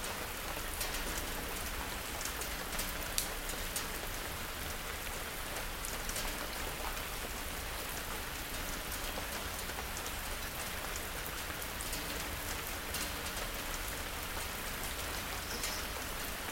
morning rain - morning rain, st. gallen
light rain on leaves, terrace, metal table. recorded aug 15th, 2008.
Saint Gallen, Switzerland